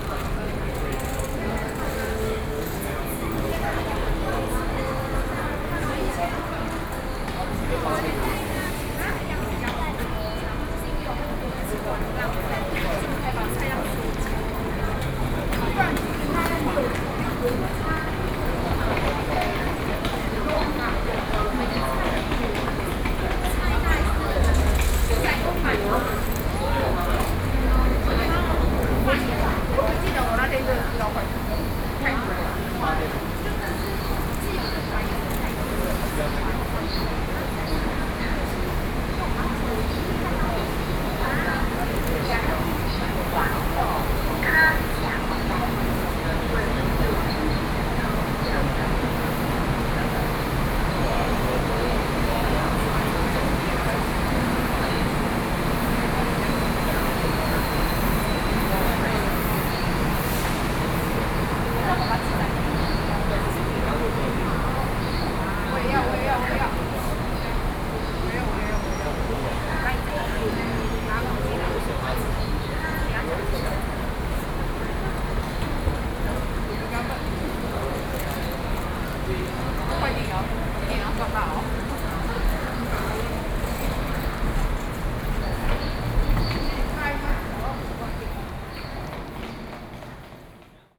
From the MRT station to the High Speed Rail Station, Sony PCM D50 + Soundman OKM II
高雄市 (Kaohsiung City), 中華民國